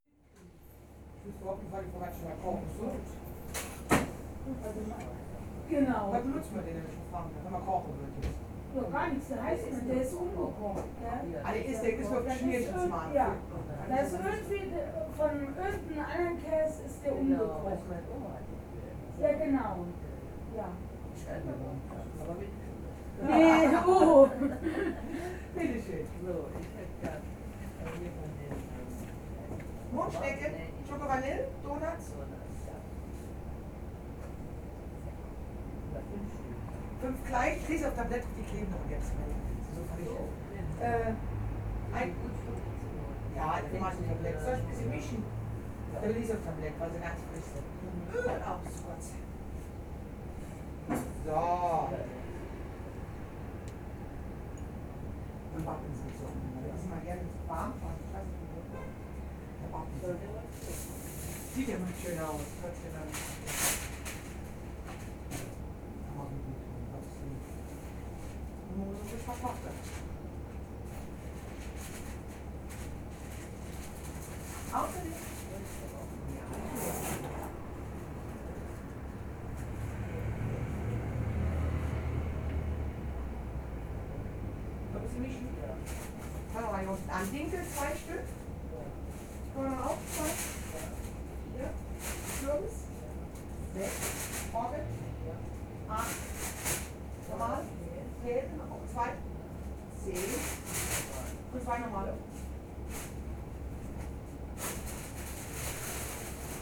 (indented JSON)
{
  "title": "Reinsfeld, Deutschland - Zwei Körner, Zwei Dinkel, Zwei Roggen",
  "date": "2016-08-03 07:30:00",
  "description": "Bäckerei mit Minisupermarkt. Ein perfekt authentischer Ort, um in einheimisches Treiben eintauchen zu können. Die neuesten Nachrichten stehen nicht in der Zeitung, sondern diese erfährt man hier!",
  "latitude": "49.68",
  "longitude": "6.88",
  "altitude": "497",
  "timezone": "Europe/Berlin"
}